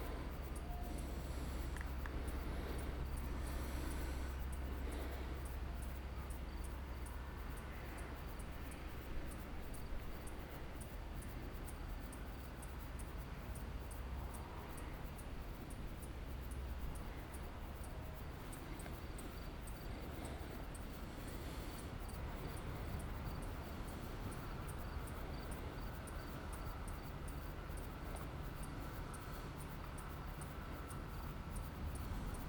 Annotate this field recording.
Walk over night on the trace of Walter Benjamin: start at Port Bou City Library at 11:54 of Wednedsay September 27 2017; up to Memorial Walter Benjamin of Dani Karavan, enter the staircases of the Memorial, stop sited on external iron cube of Memorial, in front of sea and cemetery, back to village.